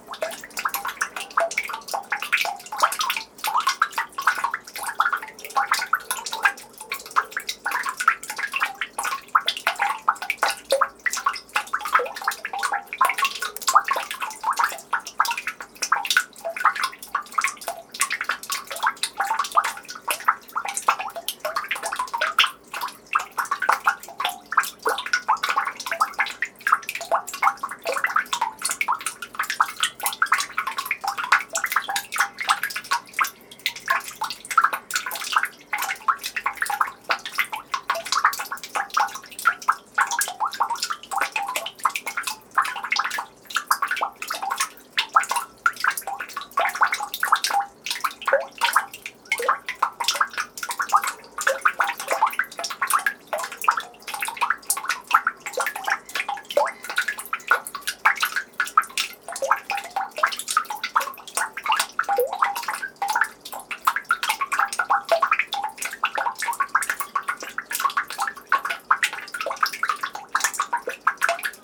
Harbour, Turku, Finlande - Rhythmic drops of melting snow in the street trough a manhole (Turku, Finland)
Some snow is melting in the street close to the port of Turku, drops of water are falling inside the manhole. Very close recording with the mic as close as possible.
Recorded with an ORTF setup Schoeps CCM4 x 2 on a Cinela Suspension
Recorder MixPre6 by Sound Devices
Recorded on 7th of April 2019 in Turku, Finland.
During a residency at Titanik Gallery.
GPS: 60.435320,22.237472